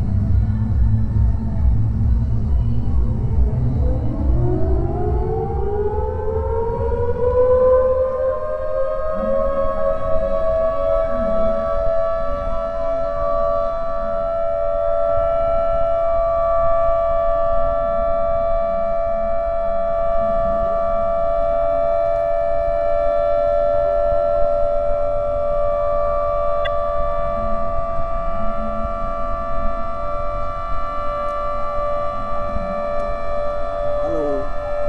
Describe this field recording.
The water-dam siren is part of the daily life in Omkareshwar.